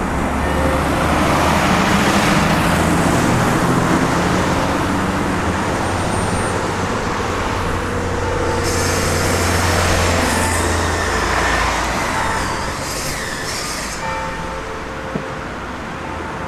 herne-unser fritz - recklinghauser/dorstener str.
recklinghauser/dorstener str.